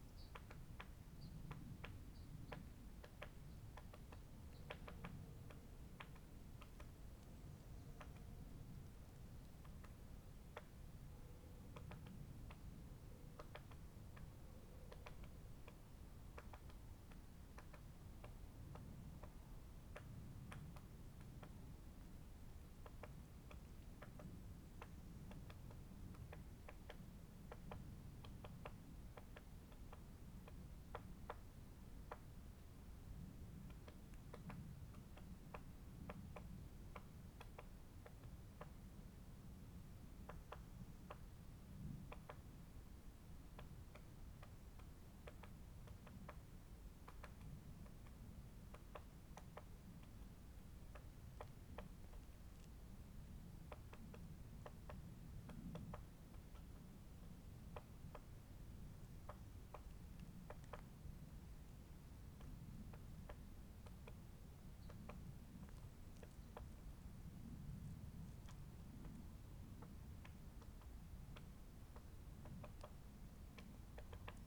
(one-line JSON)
{"title": "salveytal: geesower weg - the city, the country & me: great spotted woodpecker", "date": "2014-01-04 13:07:00", "description": "the city, the country & me: january 4, 2014", "latitude": "53.26", "longitude": "14.36", "timezone": "Europe/Berlin"}